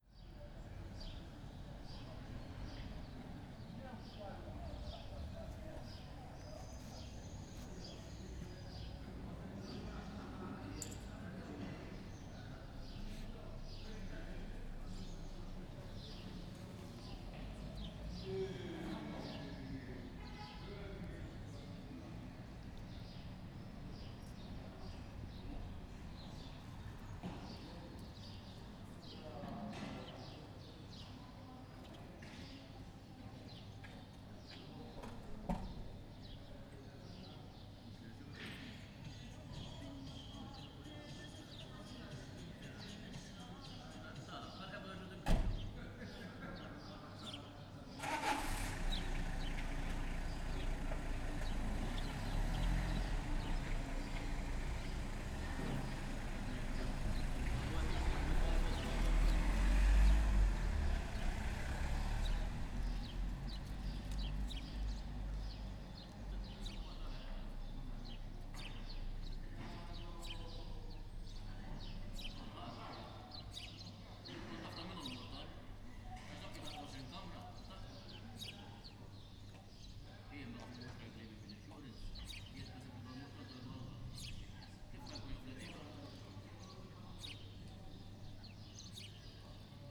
Rokku Buhagiar, Qrendi, waiting for the bus, ambience at noon
(SD702, DPA4060)
6 April 2017, ~12:00